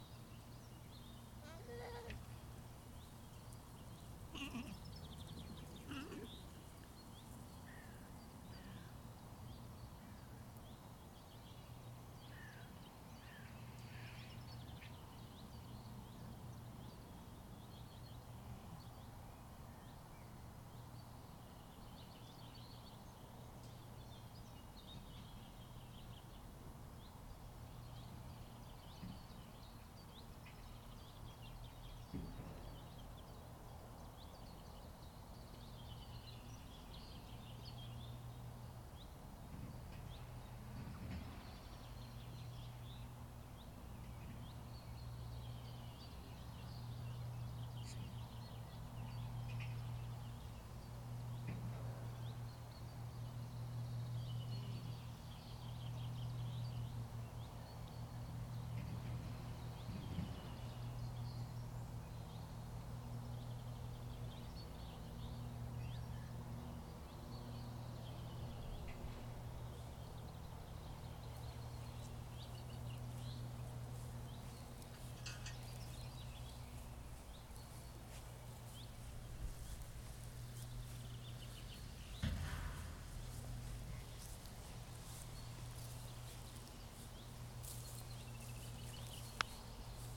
Scottish Borders, UK - Jacob Sheep
When I travelled to the Scottish Borders to run a workshop in knitting speaker pillows, I wanted to find some local fleece for making the stuffing. The fleece I found was on a nice flock of Jacob sheep, less than 10 miles from where we were staying! It's a lovely bouncy fleece, and the flock owners were really supportive of my project and allowed me to record the sheep so that I can play the sounds of the flock through the stuffing made from their wool. I love to connect places and wool in this way, and to create reminders that wool comes ultimately from the land. In this recording, the shy sheep kept evading me, as I wandered amongst them with 2 sound professional binaural microphones mounted on a twig with some cable-ties. The mics were approx 25cm apart, so not exactly stereo spaced, but hopefully give some impression of the lovely acoustics of this field, flanked on all sides with trees, and filled with ewes and their still-young lambs.
United Kingdom, European Union